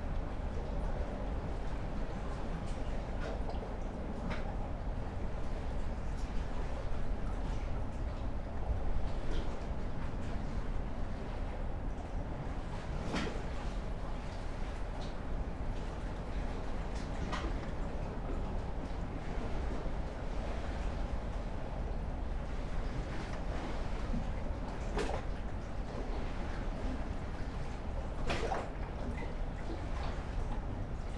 Klaipėda, Lithuania, on a pier's stones
5 May 2016